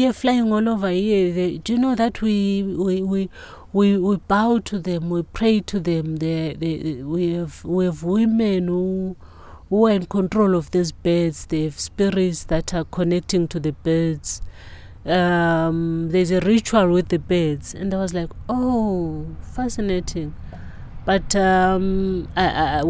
Lupane, Zimbabwe - Learning about rainmaking and birds in Binga...
...i asked Gogo in particular to tell us about her recent research in Binga that she had mentioned to the night before.... Thembi discovered that the geographically and historically closest rain-maker to her area in Lupane had lived in Binga... she went for a two-weeks research to Binga, and got to know the story of Maalila. He used to perform rain-making rituals at Binga’s hot springs until the Zimbabwe government and National Parks claimed the land as private property. Based on Gogo’s research, a thirteen-episode TV series about Maalila was developed and produced in Binga...
Thembi Ngwabi now better known as Gogo (Ugogo means granny in Ndebele) describes her transformation from a young creative woman grown up in town (Bulawayo), a dancer, bass-guitarist, director of plays and films and of the Amakhosi Performing Arts Academy to a rapidly-aged, traditional rain-dancer in the Lupane bushland…
14 October 2018, 08:36